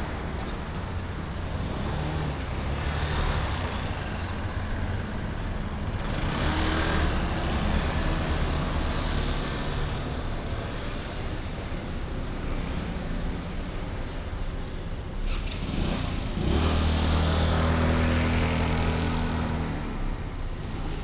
Sec., Jiaoxi Rd., Jiaoxi Township - At the roadside
At the roadside, in front of the Convenience Store, Very hot weather, Traffic Sound